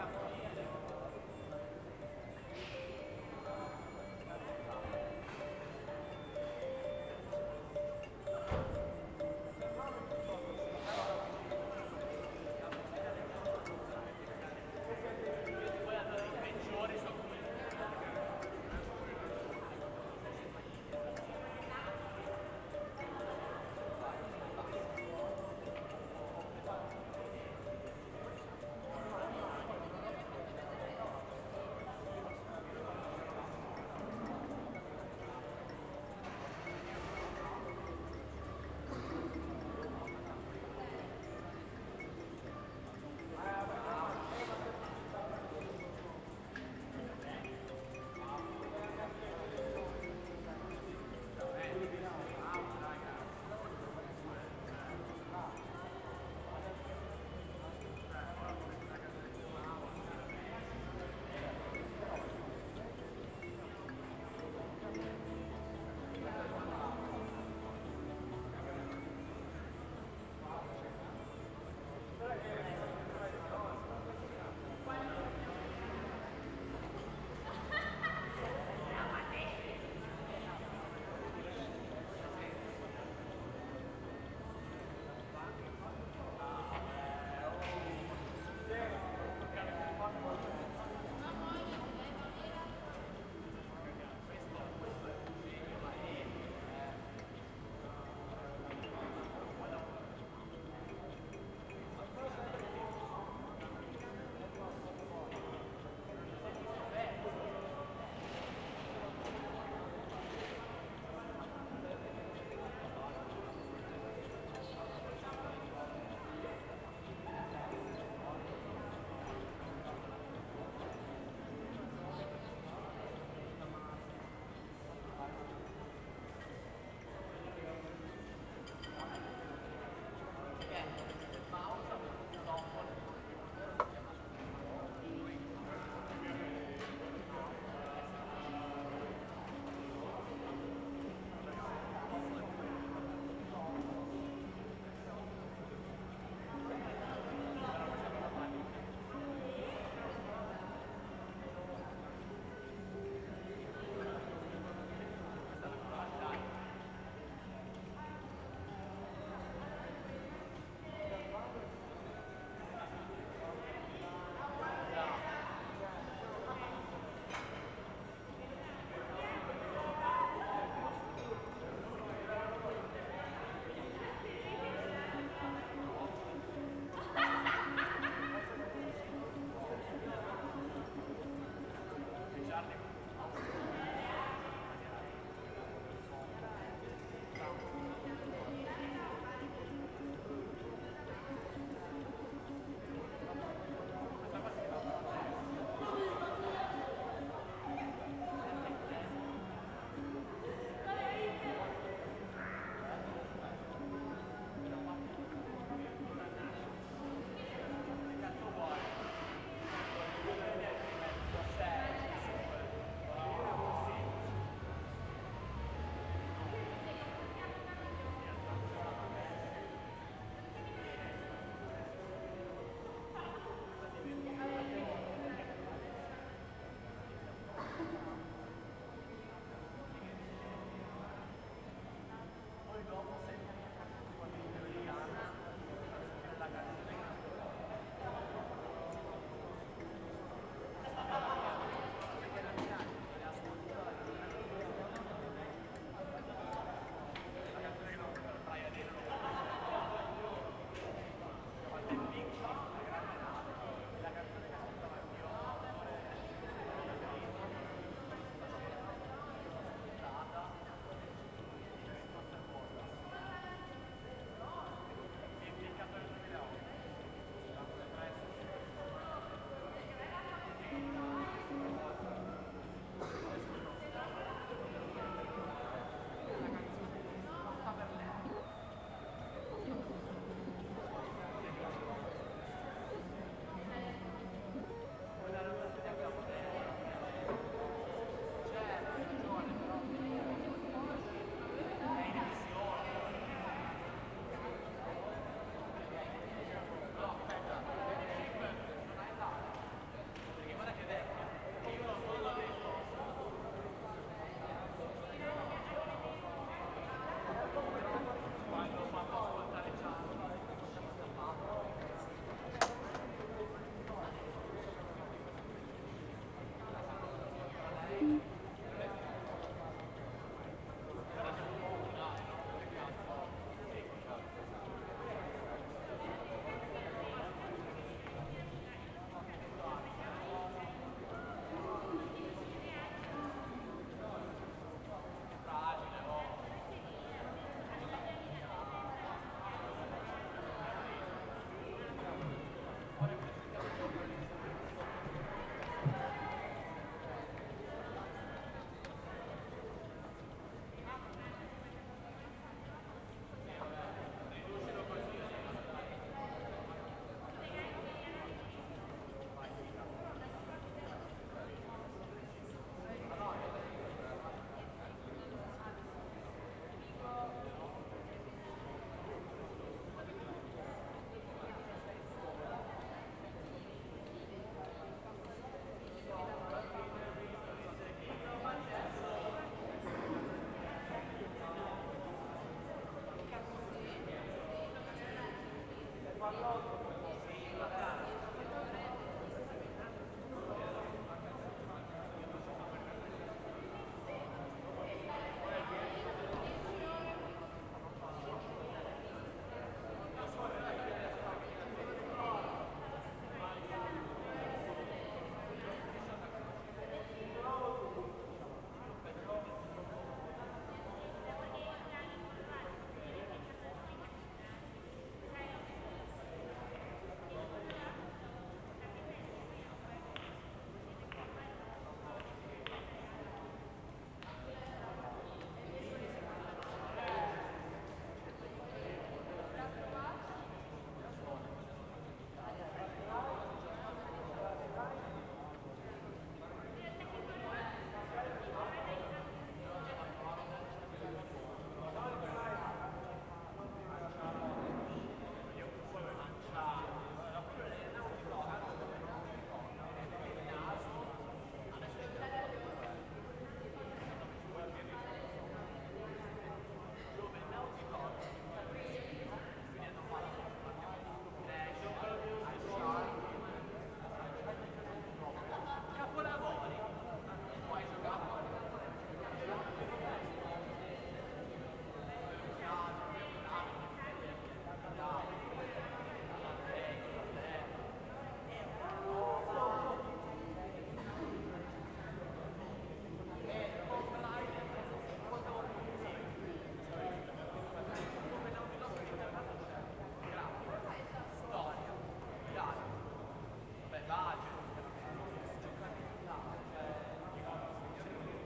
Ascolto il tuo cuore, città. I listen to your heart, city. Several chapters **SCROLL DOWN FOR ALL RECORDINGS** - Night with m’bira and Bur-Ya in background in the time of COVID19 Soundscape
"Night with m’bira and Burn-Ya in background in the time of COVID19" Soundscape
Chapter LXXXII of Ascolto il tuo cuore, città. I listen to your heart, city
Wednesdady May 20th 2020. Fixed position on an internal terrace at San Salvario district Turin, seventy one after (but day seventeen of Phase II and day three of Phase IIB) of emergency disposition due to the epidemic of COVID19.
Start at 10:31 p.m. end at 10:57 p.m. duration of recording 26’01”